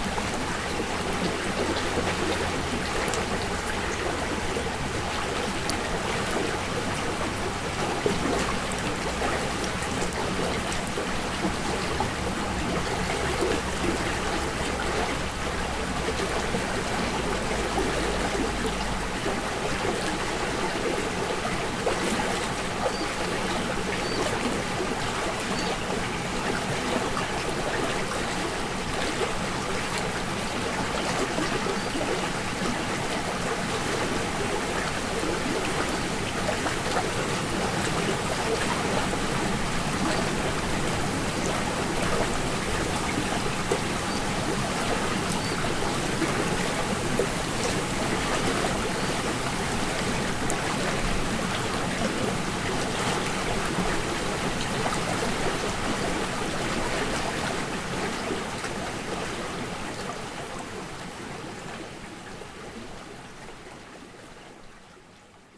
Ardeshir Palace, Fars Province, Iran. - Stream by the Ardeshir Palace
Stream flowing from a pond in the garden of the ruins of the Ardeshir Palace.
استان فارس, ایران, January 2019